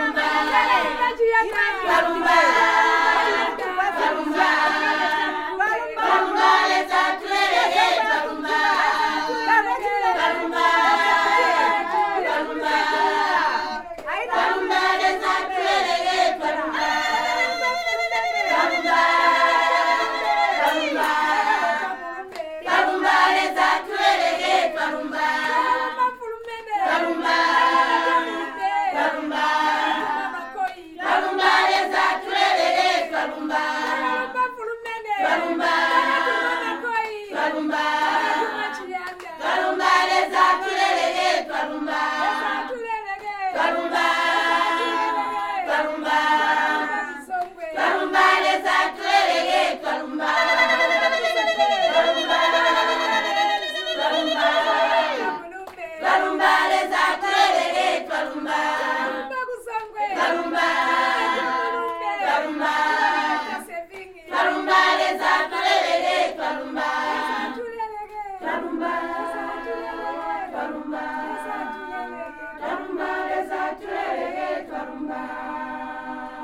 Twalumba, Leza... a thank you song by all the women for the day... Thank you, Lord...
more from women clubs in Sinazongwe is archived here: